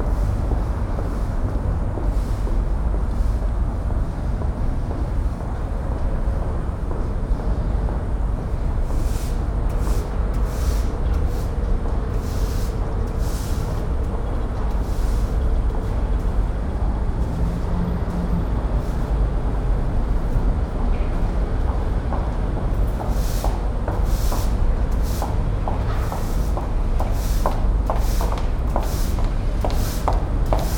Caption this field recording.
Early morning, rather silent at this time, a street sweeper, some bicycles and women with heels. PCM-M10 internal microphones.